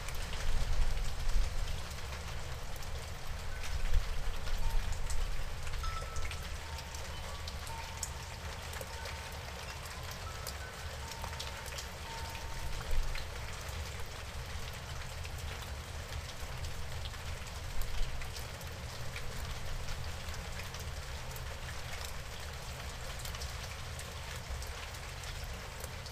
I left my Zoom H2 under the eave for some minutes while rain was falling. There's a distant plane, some very weird-sounding dogs barking, some birds, and lots of drips and drops everywhere.
2 July 2016, ~18:00